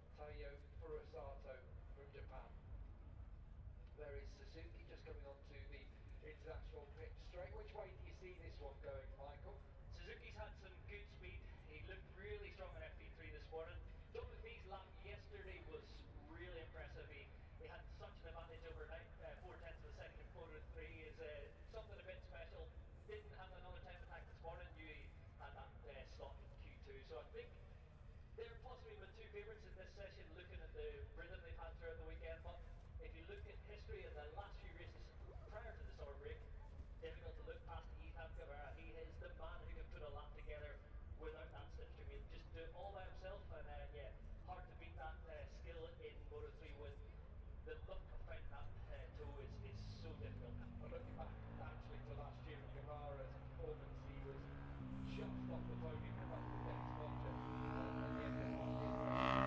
Silverstone Circuit, Towcester, UK - british motorcycle grand prix 2022 ... moto three ...
british motorcycle grand prix 2022 ... moto three qualifying two ... dpa 4060s on t bar on tripod to zoom f6 ...